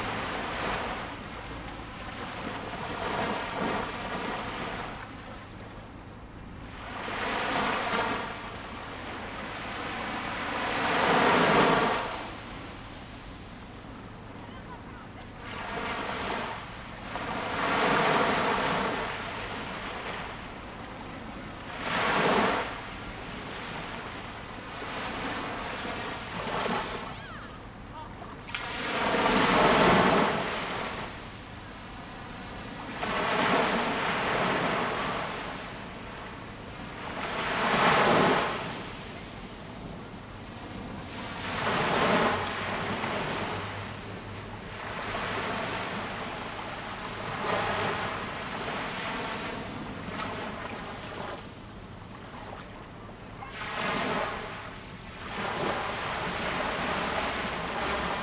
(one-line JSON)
{"title": "Wanli Dist., New Taipei City - sound of the waves", "date": "2016-08-04 10:50:00", "description": "sound of the waves, At the beach\nZoom H2n MS+XY +Sptial Audio", "latitude": "25.18", "longitude": "121.69", "altitude": "60", "timezone": "Asia/Taipei"}